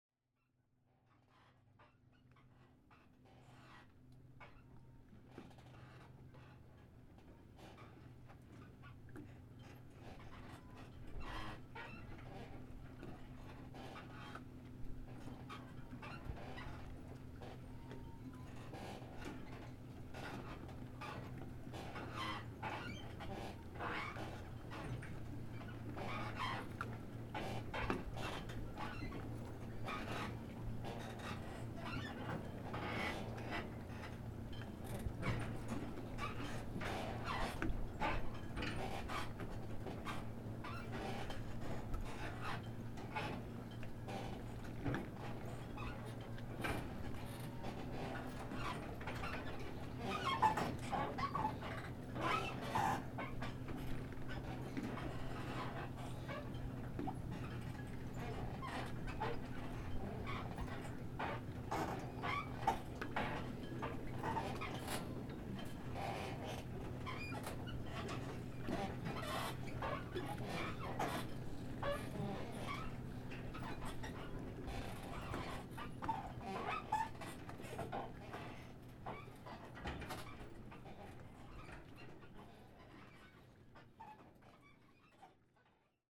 Marina, Red Bridge State Recreation Area, Amboy, IN, USA - Boats docked at the marina, Red Bridge State Recreation Area
Boats docked at the marina, Red Bridge State Recreation Area. Recorded using a Zoom H1n recorder. Part of an Indiana Arts in the Parks Soundscape workshop sponsored by the Indiana Arts Commission and the Indiana Department of Natural Resources.